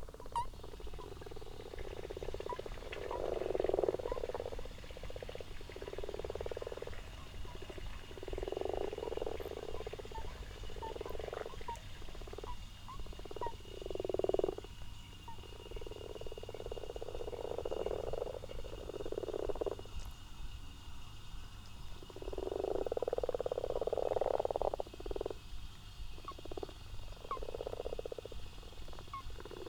Malton, UK - frogs and toads ...
common frogs and common toads in a garden pond ... xlr sass on tripod to zoom h5 ... unattended time edited extended recording ... bird calls between 17:00 and 22:00 include ... tawny owl ... possible overflying moorhen ... plus the addition of a water pump ... half the pond is now covered with frog spawn ... the goldfish are in for a time of plenty ...